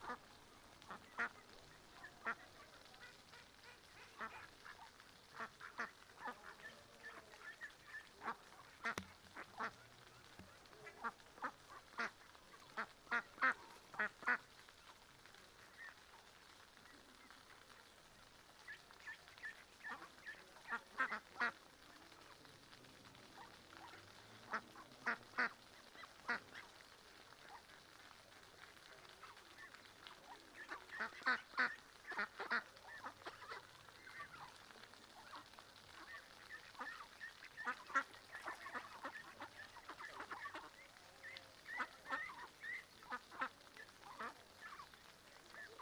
Paseo de la Senda, Griñón, Madrid, España - Tarde en el parque del río con patos
Domingo tarde en un parque muy chulo de Griñón donde hay un pequeño río donde viven patos. Los sonidos de aves que se escuchan son Ánade Real (Anas platyrhynchos). Hay de todas la edades, adultos, medianos y también patitos pequeños con sus mamás. Suele pasar mucha gente por la zona a darles de comer y suelen ponerse nerviosos cuando eso ocurre, deseando coger un trocito de comida. Cerca de nosotros había unos patitos adolescentes pidiéndonos comida. También se puede escuchar el sonido de los pequeños escalones en el río que hacen pequeñas cascadas, y una fuente grande con una tinaja de donde sale agua en cascada. La gente pasar... los niños emocionados con los patitos...